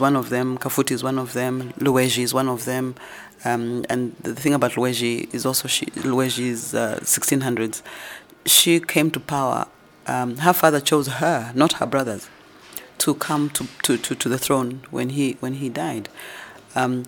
National Arts Council Offices, Luneta, Lusaka, Zambia - Mulenga Kapwepwe raises an African flag of women’s power...
The recording with Mulenga Kapwepwe took place in the busy offices of the National Arts Council of Zambia in Lusaka, which underscores Mulenga’s stories with a vivid soundtrack; even the Lusaka-Livingstone train comes in at a poignant moment. The interview is a lucky opportunity to listen to Mulenga, the artist, poet, author, researcher, playwright and storyteller she is. She offers us an audio-tour through a number of her stage productions, their cultural backgrounds and underlying research.
Mulenga Kapwepwe is the chairperson of the National Arts Council Zambia (NAC), sits on numerous government and international advisory panels, and is the Patron of a number of national arts and women organisations.